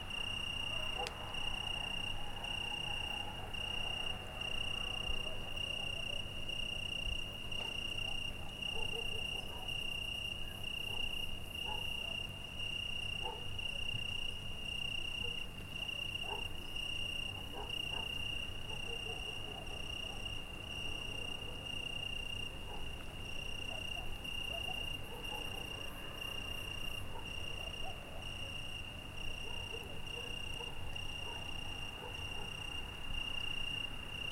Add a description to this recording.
Paisagem sonora noturna na Barragem do Crrapatelo. Portugal Mapa Sonoro do Rio Douro. Night Soundscape at Carrapatelo. Portugal. Mapa Sonoro do rio Douro.